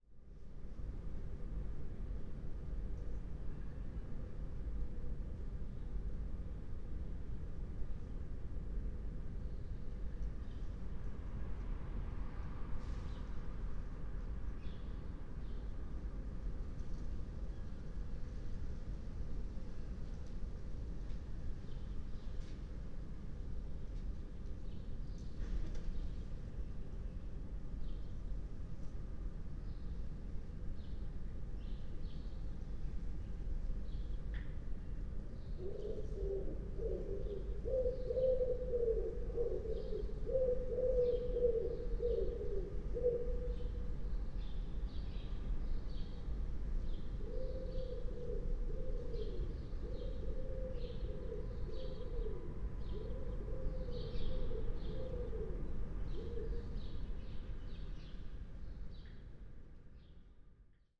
10:26 Berlin Bürknerstr., backyard window - Hinterhof / backyard ambience
it was meant as a test, but these both pidgeons communicating are worth to keep.